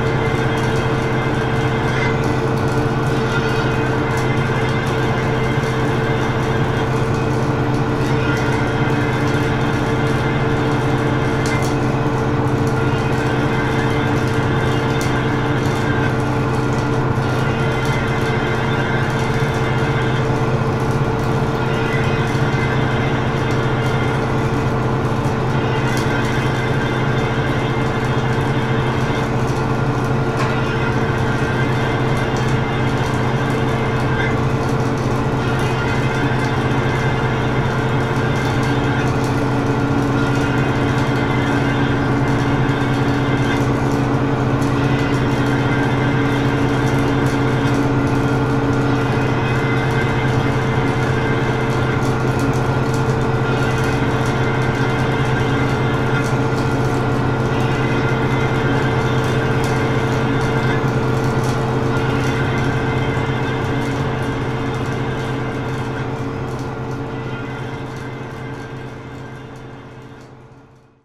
This is the biggest dump of Belgium. Recording of an elevated tube doing strange noises.
Mont-Saint-Guibert, Belgium